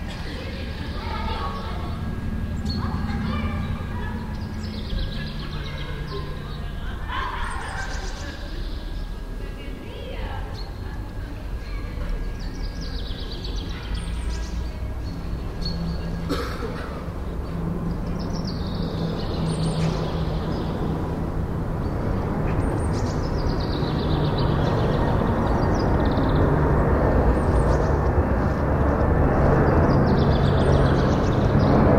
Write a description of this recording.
spielplatz zwischen wohnhauskästen, morgens - das überfliegen des stetem flugverkehrs, project: :resonanzen - neanderland soundmap nrw: social ambiences/ listen to the people - in & outdoor nearfield recordings